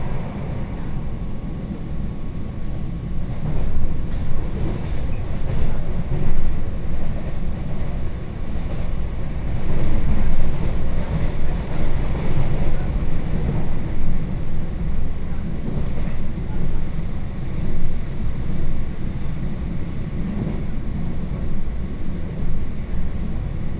Nangang District, Taipei City - On the train